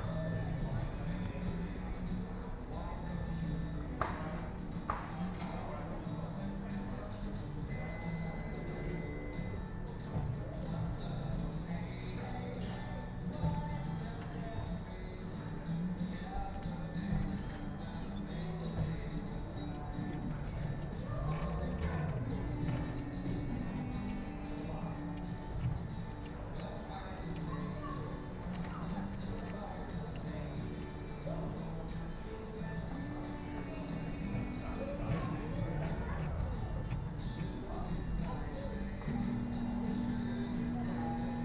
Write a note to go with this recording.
automated key cutter ... stood there listening to music ... so used the LS 14 integral mics and recorded ... background noise from ... shelf stacker ... store announcements ... voices ... etc ... key plinks into the out tray at 2:28 approx ... it promptly shut down and did not produce the next key ... bird calls ... herring gull ...